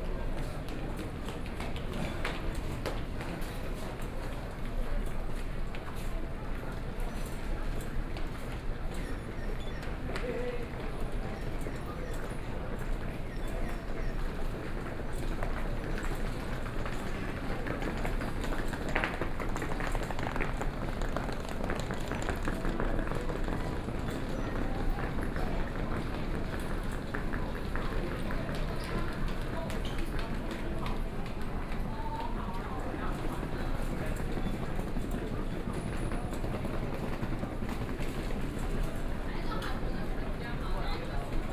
Taipei Station, Taiwan - soundwalk
From the station lobby, Then went to the station platform floor entrance, And from the crowd of passengers, Station broadcast messages, Binaural recordings, Sony PCM D50 + Soundman OKM II
Taipei City, Taiwan, 31 October 2013